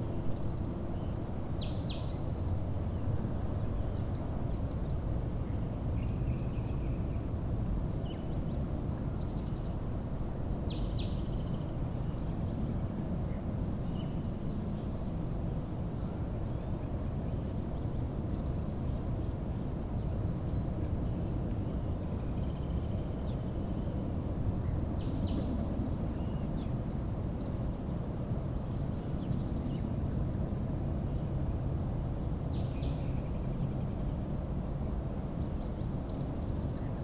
Rio Grande, Albuquerque, NM, USA - Always Live Your Dreams
El bosque en Rio Grande accessed via Rio Grande Nature Center. Recording title taken from black marker graffiti on bench: "Always Live Your Dreams." Recorded on Tascam DR-100MKII, edited for levels on Audacity.